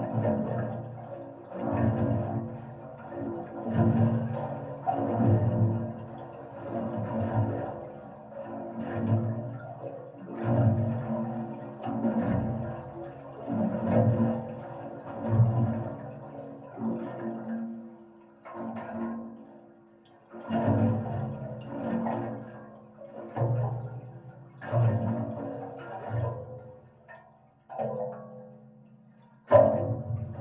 Rostrevor, N. Ireland - Tide Coming In Over Metal Stairs
Recorded with a pair of JrF contact mics and a Marantz PMD661
Newry, Newry and Mourne, UK, 18 February 2016, ~17:00